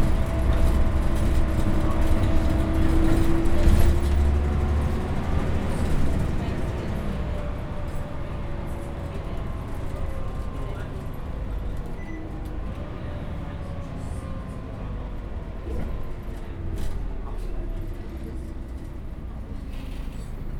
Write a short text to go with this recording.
Bus, Public Transport, Transport, Common, Engine, Passengers, Newcastle, UK, Tyne Bridge, River Tyne, Geordies, Road, Travel